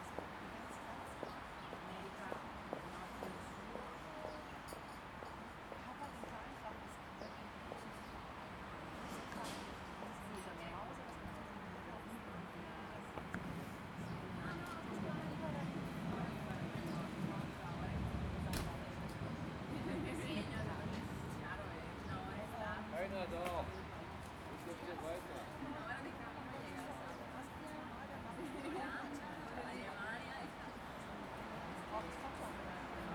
S-Café Friedenau, Berlin, Deutschland - S-Café Friedenau
the s-café in friedenau (a berlin district) is located near the rails of the s-bahn, so you hear the train passing every 10 minutes. people are chatting and drinking coffe on the litte square in front of the station.
Berlin, Germany